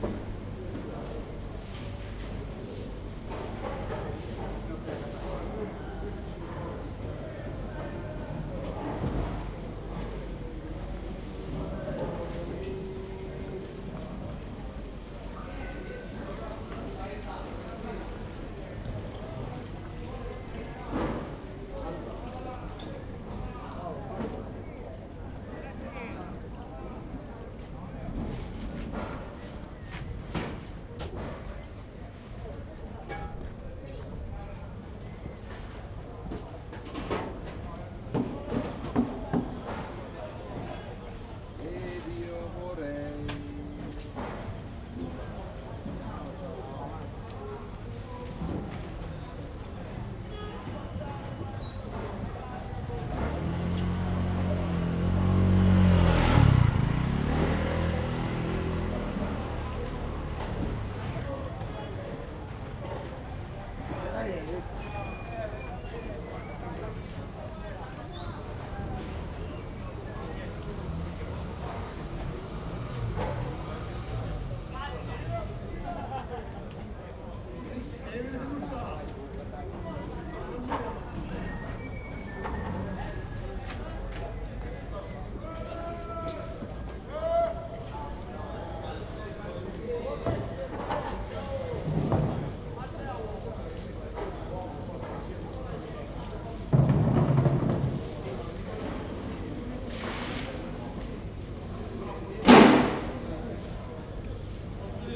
Via Cardinale Dusmet, Catania CT, Italy - Fish Market
Fish Market under the railway, cleaning of the place.